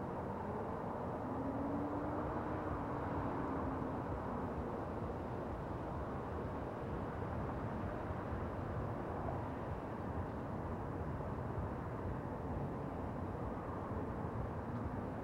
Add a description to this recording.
Recorded with a Zoom H5. Light traffic noise, a little bit of party music and an airplane flies over at the end. Around 3 minutes the traffic noise suddenly becomes very quiet.